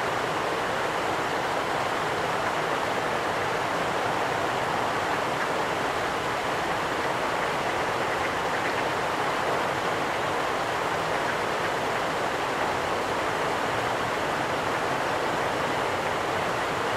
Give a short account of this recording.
On the bridge over the river Neglinka. You can hear the water rushing and the ducks quacking. Day. Warm winter.